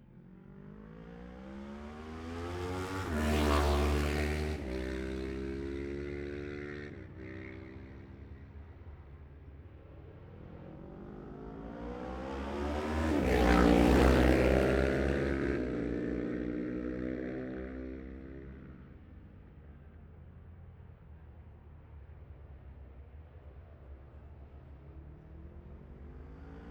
Jacksons Ln, Scarborough, UK - olivers mount road racing 2021 ...
bob smith spring cup ... twins group A qualifying ... luhd pm-01 mics to zoom h5 ...
22 May 2021